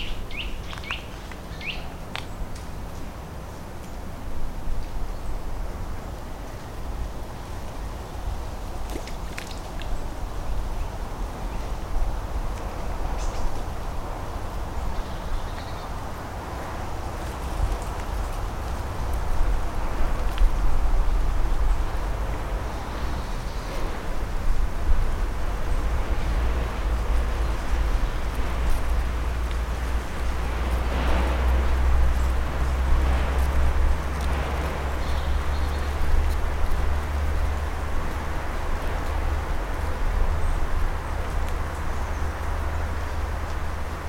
leipzig lindenau, privater see zwischen friesenstraße und wasserstraße
see auf öffentlich begehbarem privatgrundstück zwischen friesen- und wasserstraße hinter der sportanlage, direkt an der kulturwerkstatt. eicheln fallen ins wasser, schritte, vogelstimmen, sogar eine hummel kurz am mikrophon, im hintergrund eine motorsäge und autos. und zwei nieser...